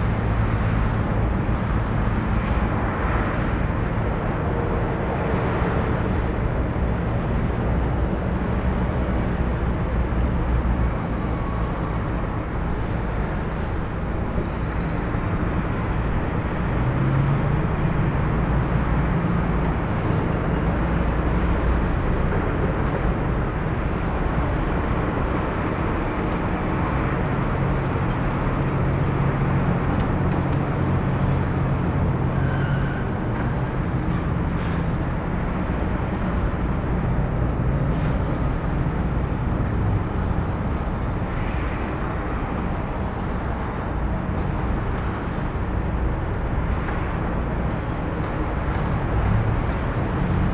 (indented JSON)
{
  "title": "Tsentralnyy rayon, Woronesch, Oblast Woronesch, Russland - Ul. Shishkowa in the morning",
  "date": "2014-06-10 10:42:00",
  "description": "recorded from a panel flat, 2nd floor, massive construcion going on opposite of streen. Olympus Recorder",
  "latitude": "51.71",
  "longitude": "39.19",
  "altitude": "147",
  "timezone": "Europe/Moscow"
}